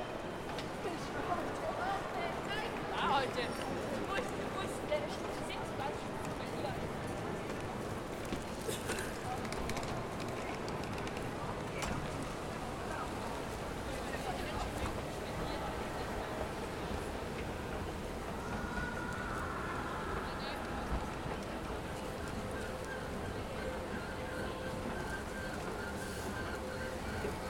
Meret Oppenheim-Strasse, Basel, Schweiz - Bahnhof SBB
Soundwalk from main entrance Centralbahnplatz past a playing band across the platform access gallery, listening to an announcement, on to the south entrance of the station. (Zoom H6, MS Microphone)